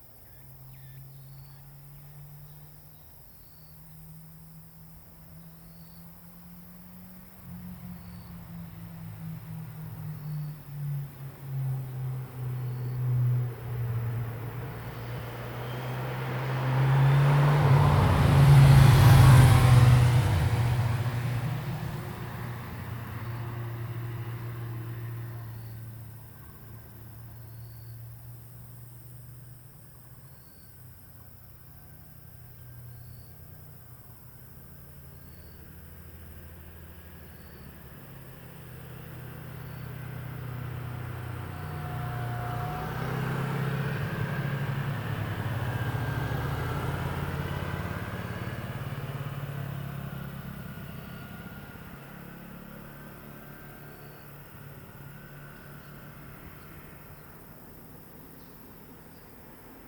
上金暖1鄰, Fuxing Dist., Taoyuan City - traffic sound
Bird call, Cicada sound, traffic sound
Zoom H2n MS+XY